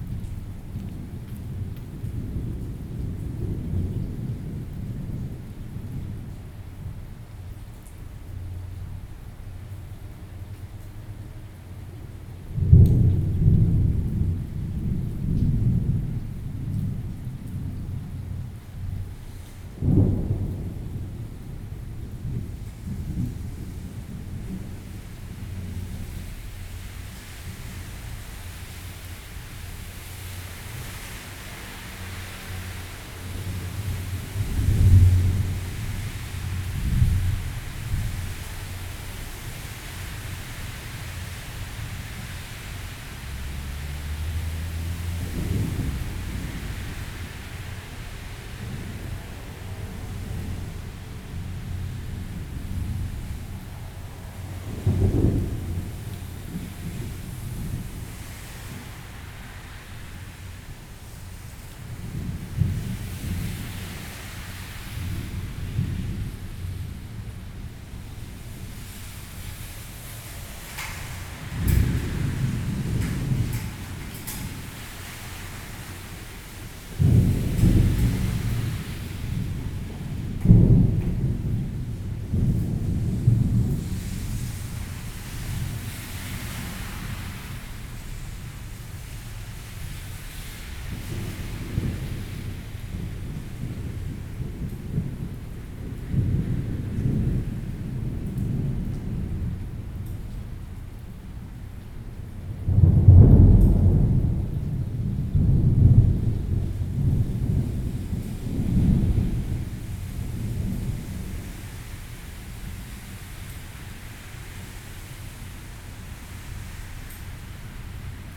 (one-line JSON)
{"title": "tamtamART.Taipei - Thunderstorm", "date": "2013-06-23 16:49:00", "description": "Thunderstorm, Indoor, Near the main door, Microphone placed on the ground, Sony PCM D50 + Soundman OKM II", "latitude": "25.05", "longitude": "121.52", "altitude": "24", "timezone": "Asia/Taipei"}